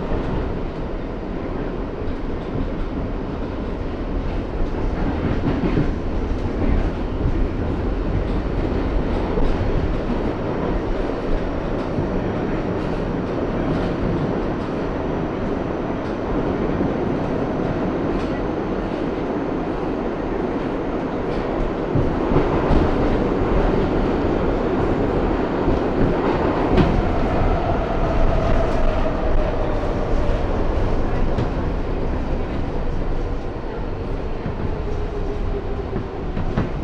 W 23rd St, New York, NY, USA - on a Subway Train downtown
riding on a Subway Train downtown from 23rd Street with a stop at 14th Street and continuing to West 4th Street